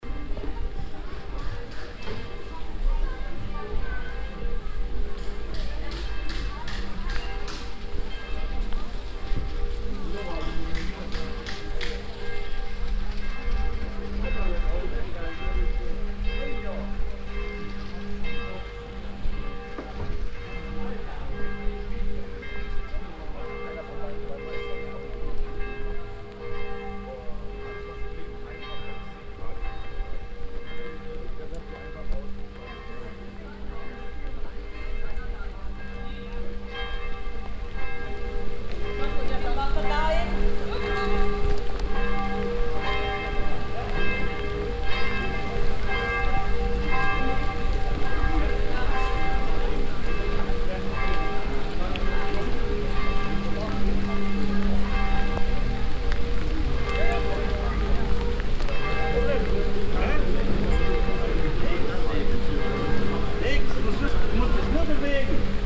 vianden, evening bells and fountain
Recorded on a rainy summer evening. The evening bells and a bigger sculture fountain on the square.
Vianden, Abendglocken und Brunnen
Aufgenommen an einem regnerischen Sommerabend. Die Abendglocken und ein großer Skulpturenbrunnen auf dem Marktplatz.
Vianden, carillon du soir et fontaine
Enregistré par un soir d’été pluvieux. Le carillon du soir et une grande fontaine sculptée sur la place.
Project - Klangraum Our - topographic field recordings, sound objects and social ambiences